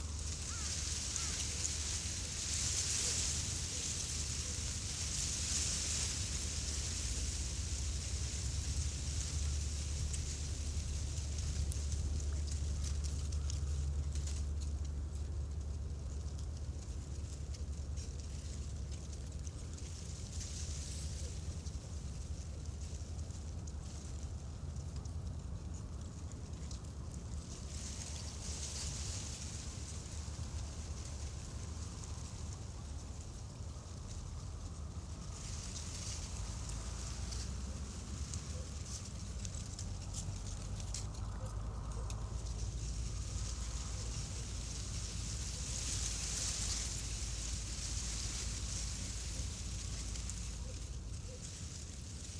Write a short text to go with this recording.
dried grass at the shore of the river is the place where I hide my mics...wind comes through the grass...